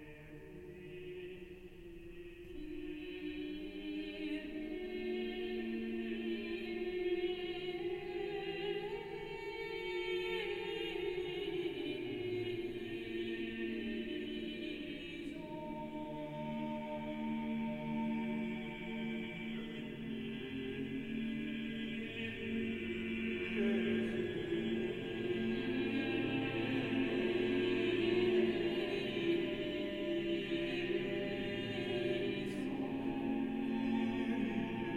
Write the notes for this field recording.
[Zoom H4n Pro] Kyrie Eleison, exhibit about polyphony in the museum.